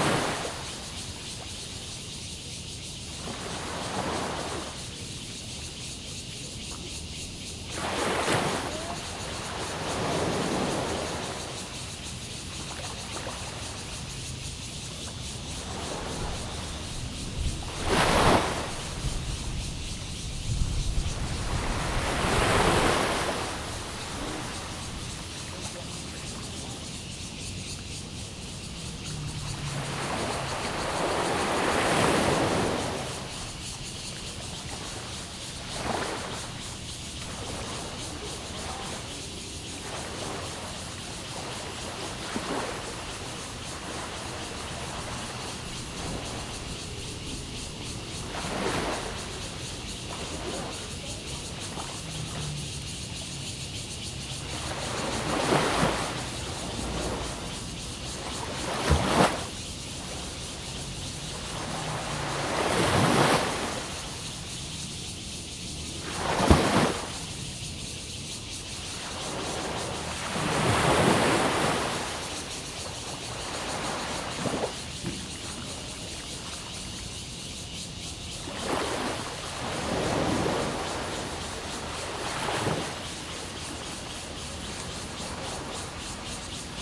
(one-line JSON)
{"title": "淡水榕堤, Tamsui District, New Taipei City - On the river bank", "date": "2015-08-07 18:16:00", "description": "Before typhoon, Sound tide, Cicadas cry\nZoom H2n MS+XY", "latitude": "25.17", "longitude": "121.44", "altitude": "7", "timezone": "Asia/Taipei"}